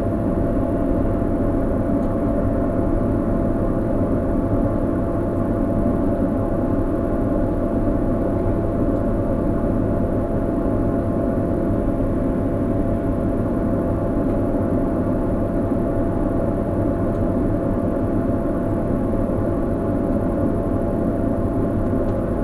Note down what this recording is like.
(binaural recording) standing on a corner of a service complex. hard to the left a sound of a steam vent. the hum of the vent is cut of on the right side as I stood right on the corner of the building, half of my head exposed to the vent, half not. behind a wall is a dry cleaning service. on the right side you can hear gentle crackles of the wall being shaken by the cleaning machines. (roland r-07 + luhd PM-01 bins)